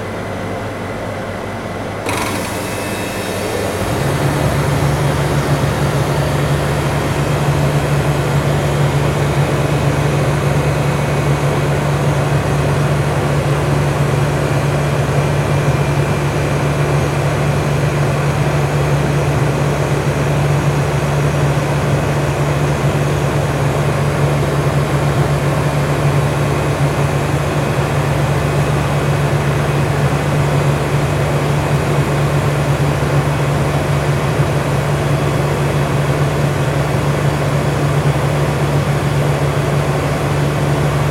Place Victor Hugo, Toulouse, France - Cold Chamber
Cold Chamber Motor Engine
Captation : Zoom H4n4